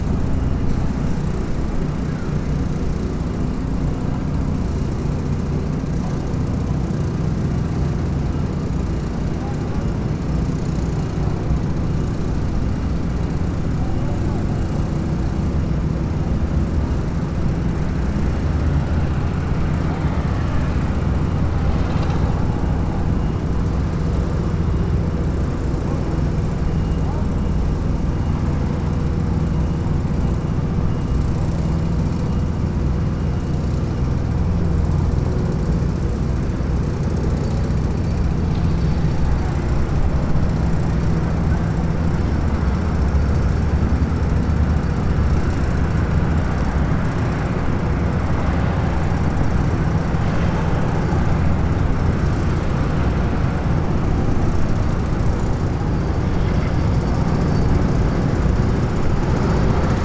one minute for this corner: Titova cesta